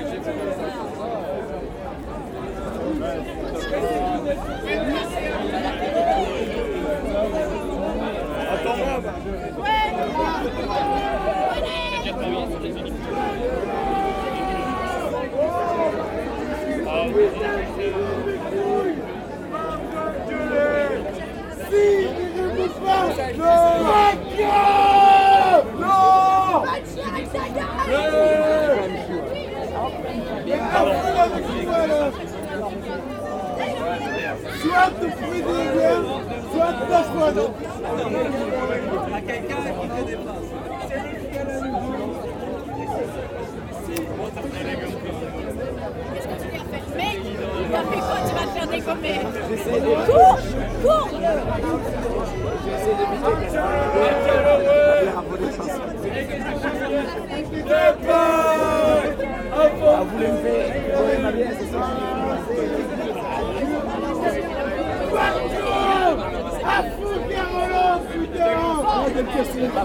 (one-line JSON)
{"title": "Ottignies-Louvain-la-Neuve, Belgique - 24 Hours bikes feast", "date": "2018-10-24 21:45:00", "description": "(en) Each year in Louvain-La-Neuve city happens a festival called the 24-hours-bikes. It’s a cycling race and a parade of folk floats. But above all, this is what is called in Belgian patois a “guindaille”. Quite simply, it's a student’s celebration and really, it’s a gigantic feast. In fact, it’s the biggest drinking establishment after the beer feast in Munich. Forty thousand students meet in aim to feast on the streets of this pedestrian city. It's a gigantic orgy encompassing drunkenness, lust and debauchery. People are pissing from the balconies and at every street corner. There’s abundance of excess. During a walk in these streets gone crazy, this is the sound of the event. It’s more or less an abnormal soundscape.\n(fr) Chaque année a lieu à Louvain-La-Neuve une festivité nommée les 24 heures vélo. Il s’agit d’une course cycliste et un défilé de chars folkloriques. Mais surtout, c’est ce qu’on appelle en patois belge une guindaille. Tout simplement, c’est une festivité étudiante.", "latitude": "50.67", "longitude": "4.61", "altitude": "115", "timezone": "Europe/Brussels"}